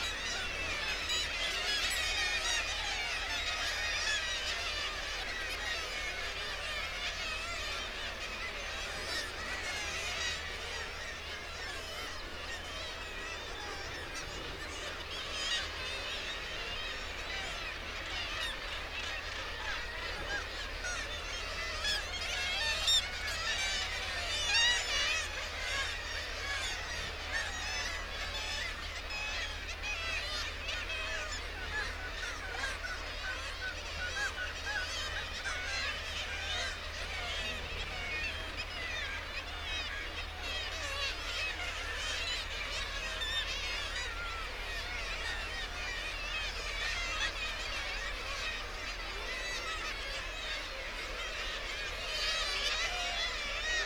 Bempton, UK - Kittiwake soundscape ...
Kittiwake soundscape ... RSPB Bempton Cliffs ... kittiwake calls and flight calls ... guillemot and gannet calls ... open lavalier mics on the end of a fishing landing net pole ... warm ... sunny morning ...
Bridlington, UK, July 2016